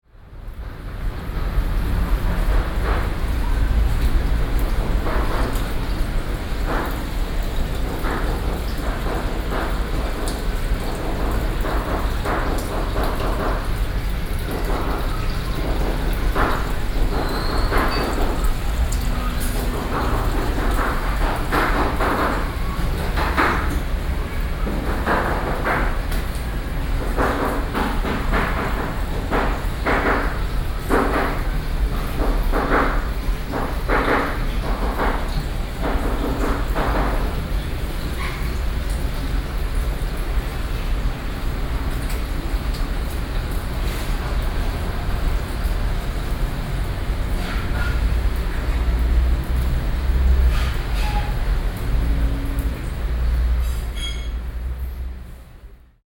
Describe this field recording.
Cooking, Sony PCM D50 + Soundman OKM II